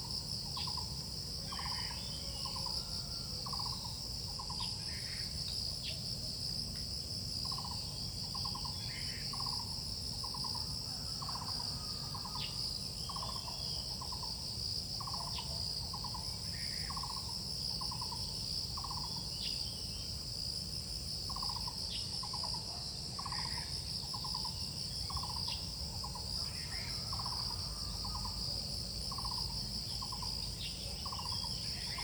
中路坑, 桃米里, 埔里鎮 - Bird and insects
Sound of insects, Bird sounds, in the morning, Crowing sounds
Zoom H2n MS+XY